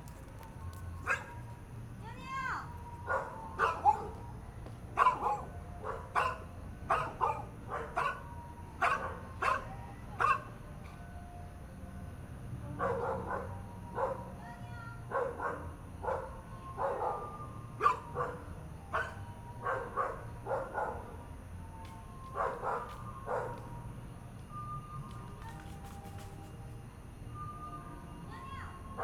The hostess is looking for a dog, Dogs barking, Traffic Sound, Aircraft flying through, Binaural recordings, Zoom H4n+ Soundman OKM II
Zhongshan District, Taipei City, Taiwan, 17 February 2014